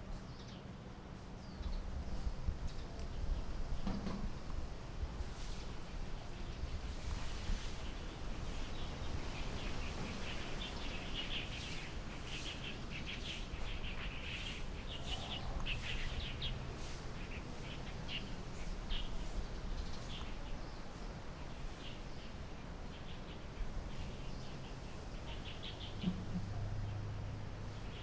{"title": "篤行十村, Magong City - under large trees", "date": "2014-10-23 08:05:00", "description": "In large trees, Wind, Birds singing, Traffic Sound\nZoom H6+ Rode NT4", "latitude": "23.56", "longitude": "119.56", "altitude": "14", "timezone": "Asia/Taipei"}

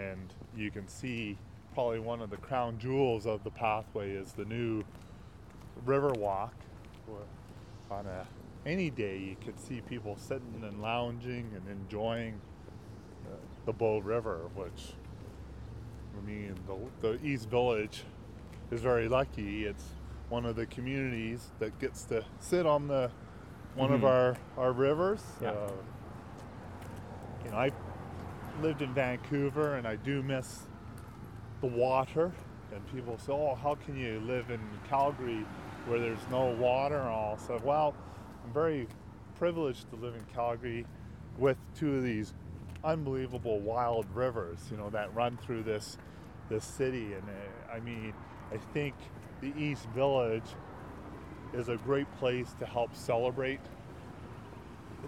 {"title": "East Village, Calgary, AB, Canada - the rivers", "date": "2012-03-05 16:50:00", "description": "Through telling and sharing stories about the East Village, the project gives space to experiences and histories that are not adequately recognized.", "latitude": "51.05", "longitude": "-114.05", "altitude": "1038", "timezone": "America/Edmonton"}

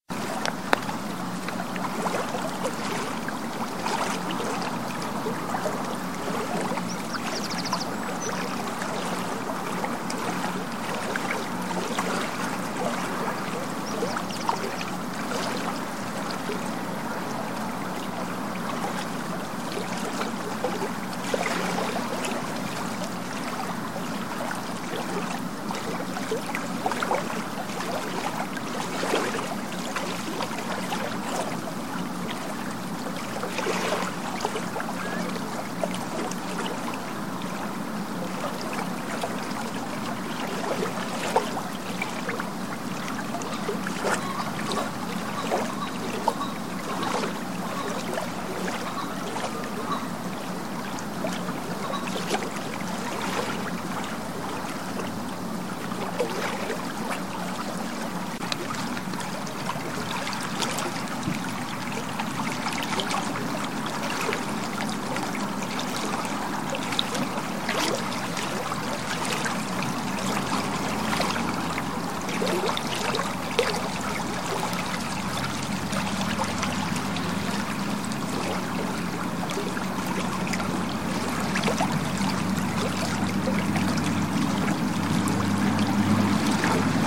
{"title": "Ardfinnan, Co. Tipperary, Ireland - Water.Ardfinnan", "date": "2014-01-05 15:00:00", "description": "River Suir at Ardfinnan, Tipperary", "latitude": "52.31", "longitude": "-7.88", "timezone": "Europe/Dublin"}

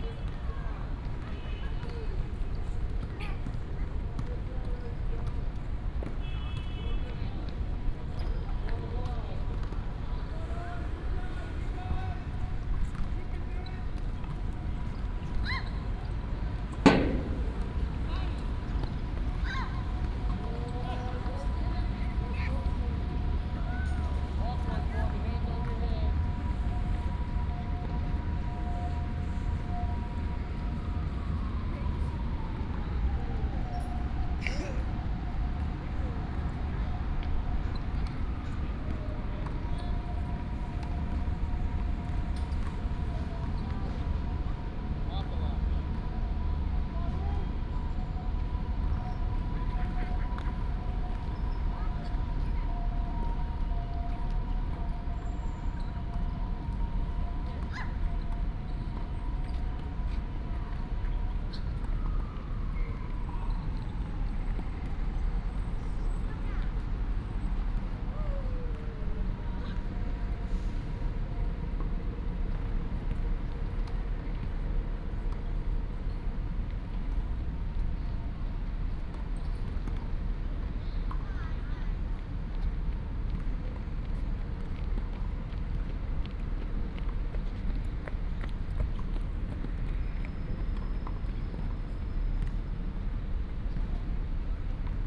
{"title": "國立臺灣大學, Taiwan - In the playground", "date": "2016-02-22 11:50:00", "description": "In the playground, In the university", "latitude": "25.02", "longitude": "121.53", "altitude": "7", "timezone": "Asia/Taipei"}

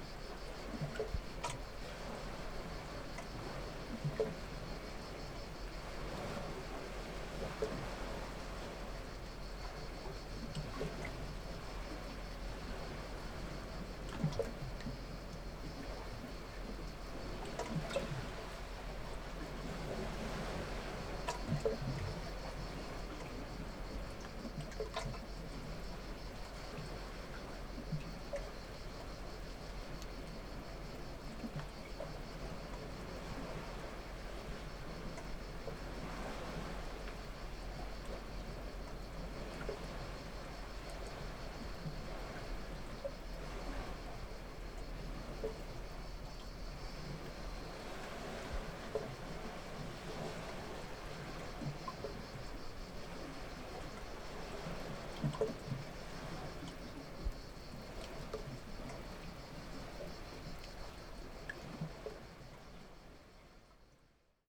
{"title": "near Pachia Ammos Beach, Samothraki, Griechenland - water cave", "date": "2019-06-30 12:00:00", "description": "inside a small cavity in the rocks, cliffs near pachia ammos beach samothraki", "latitude": "40.39", "longitude": "25.59", "altitude": "13", "timezone": "Europe/Athens"}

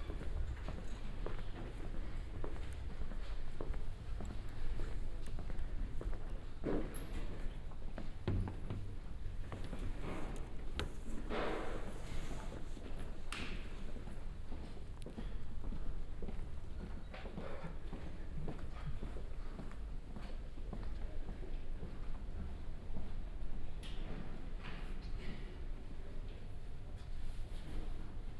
{"title": "Zentralbibliothek @ TU Berlin - Enter Zentralbib", "date": "2022-03-09 12:06:00", "latitude": "52.51", "longitude": "13.33", "altitude": "36", "timezone": "Europe/Berlin"}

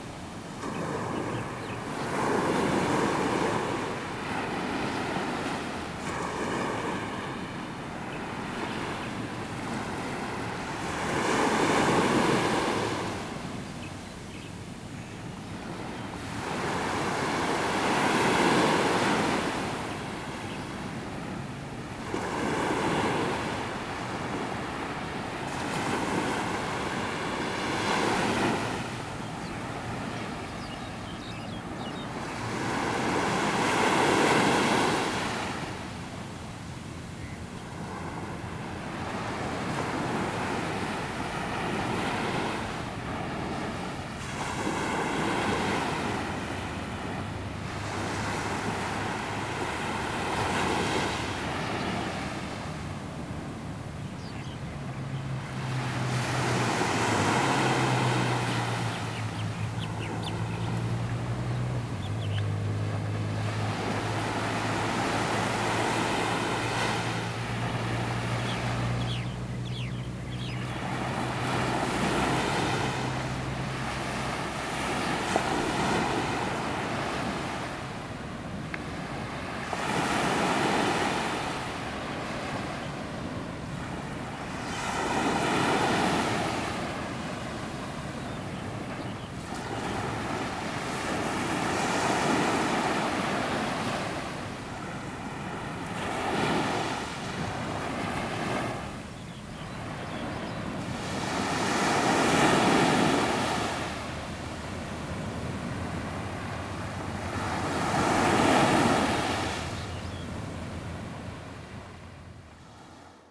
{"title": "淺水灣海濱公園, 三芝區後厝里, New Taipei City - the waves", "date": "2016-04-15 07:20:00", "description": "Aircraft flying through, Sound of the waves\nZoom H2n MS+H6 XY", "latitude": "25.25", "longitude": "121.47", "altitude": "4", "timezone": "Asia/Taipei"}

